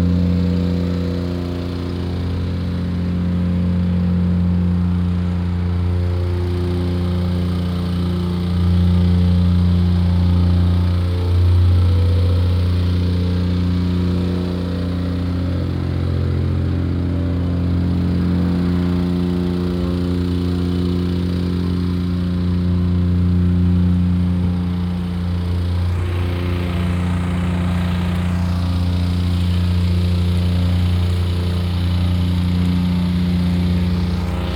Poznan, city limits - plate compactor
(binaural) man operating a plate compactor, evening a patch of sand at a construction site. the drone fades and morphs as the operator moves behind a concrete manhole.
Poznan, Poland, 7 November 2014, ~13:00